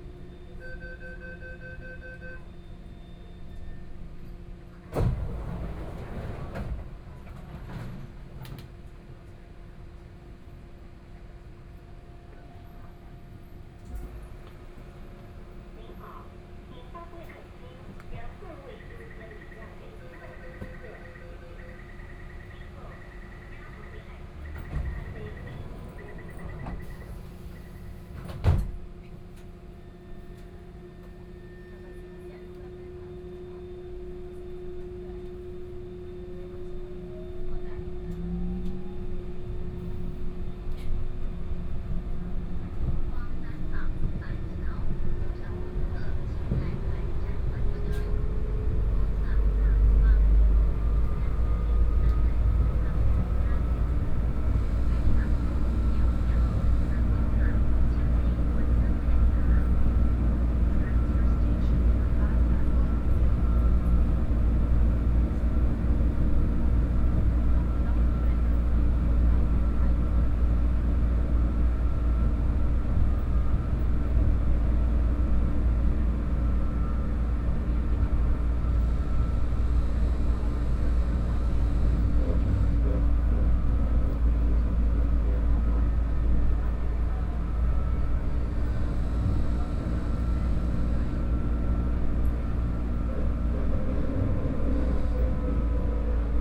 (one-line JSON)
{"title": "Fuxing N. Rd., Zhongshan Dist. - Brown Line (Taipei Metro)", "date": "2014-04-03 13:01:00", "description": "from Zhongshan Junior High School station to Zhongxiao Fuxing station", "latitude": "25.05", "longitude": "121.54", "altitude": "25", "timezone": "Asia/Taipei"}